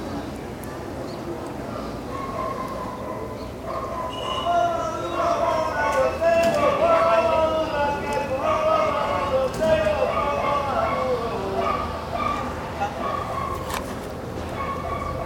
Santiago de Cuba, vendedor ambulante